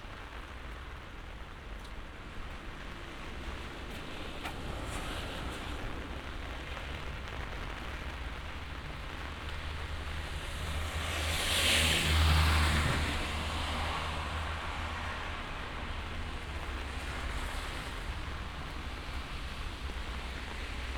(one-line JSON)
{"title": "Ascolto il tuo cuore, città. I listen to your heart, city. **Several chapters SCROLL DOWN for all recordings ** - I’m walking in the rain in the time of COVID19 Soundwalk", "date": "2020-04-20 16:15:00", "description": "\"I’m walking in the rain in the time of COVID19\" Soundwalk\nChapter LI of Ascolto il tuo cuore, città. I listen to your heart, city\nMonday April 20th 2020. San Salvario district Turin, walking to Corso Vittorio Emanuele II and back, forty one days after emergency disposition due to the epidemic of COVID19.\nStart at 4:15 p.m. end at 4:43 p.m. duration of recording 28’00”\nThe entire path is associated with a synchronized GPS track recorded in the (kmz, kml, gpx) files downloadable here:", "latitude": "45.06", "longitude": "7.69", "altitude": "237", "timezone": "Europe/Rome"}